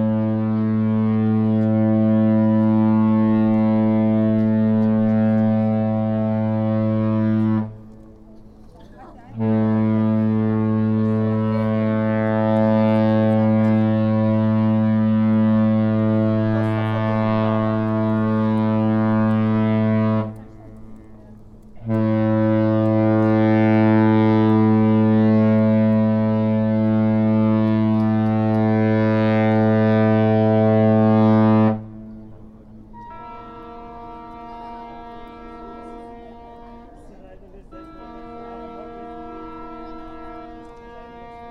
warnemünde, westmole - kreuzfahrtschiff läuft aus
warnemünde, westmole: kreuzfahrtschiff läuft aus